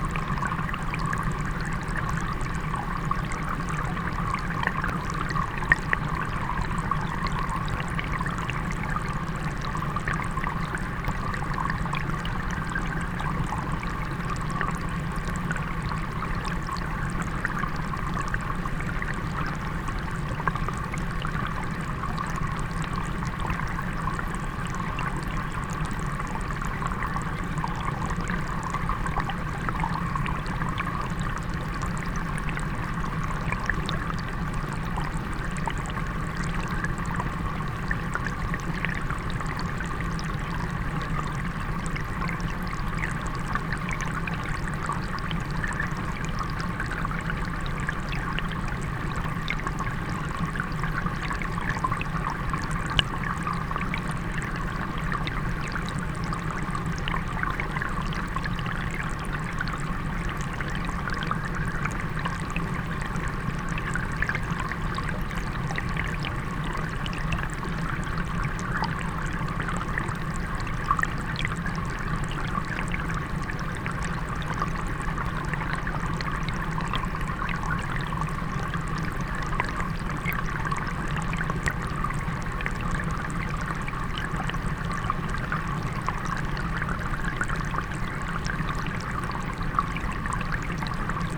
Walking Holme BlckplBridge
Water flowing underneath a footbridge.
Kirklees, UK, 29 May 2011